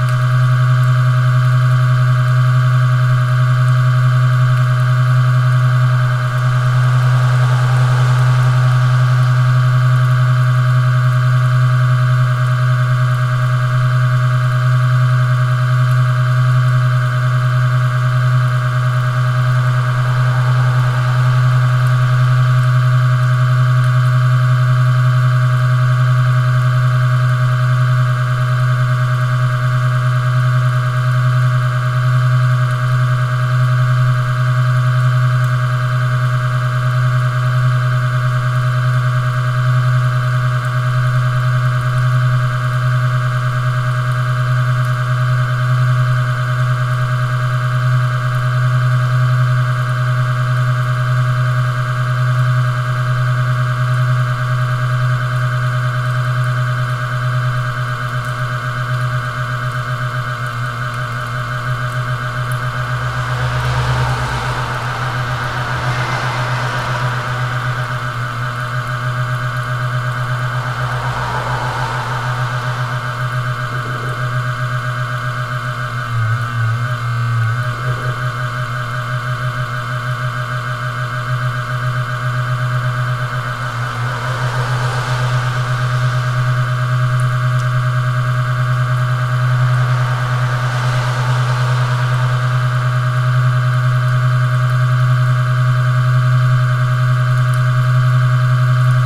Jonavos g., Kaunas, Lithuania - LED signal board hum
A close proximity recording of LED signal board box near an industrial building courtyard entrance. A rhythmic hum sometimes breaks down into a glitchy weird sound and then comes back into an engine-like groove. Traffic passing by can be heard as well. Recorded with ZOOM H5.